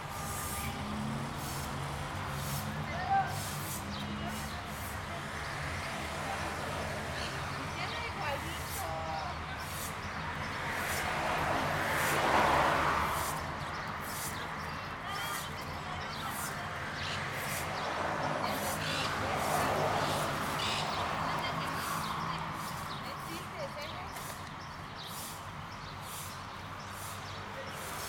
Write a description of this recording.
Recorded with a pair of DPA4060s and a Marantz PMD661